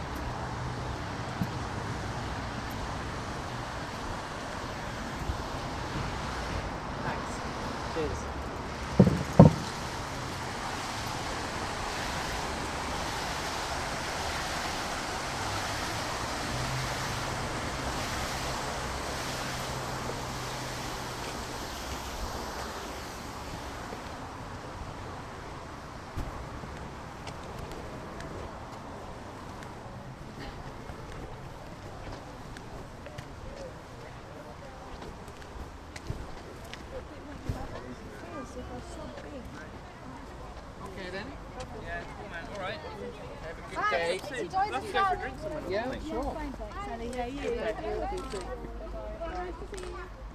Wick Lane, London Borough of Tower Hamlets, UK - walk along canel, under bridge, Wick Lane
Greater London, UK, 14 March 2012